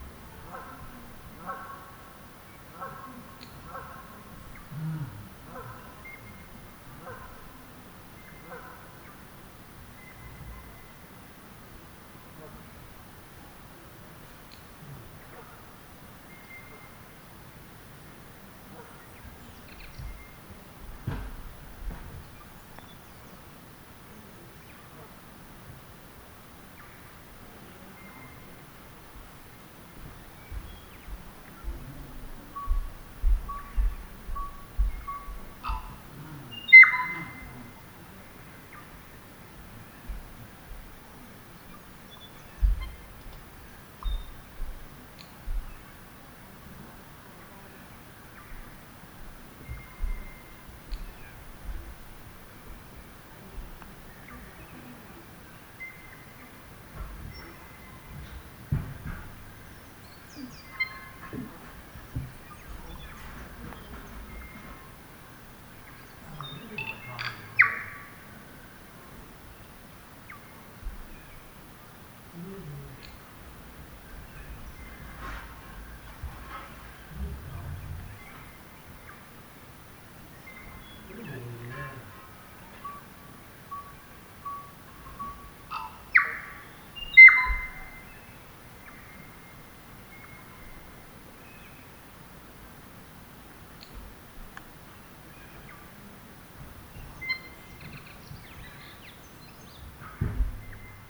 Late afternoon at the Manson Nicholls Memorial Hut. Birdlife with occasional footsteps and domestic sounds.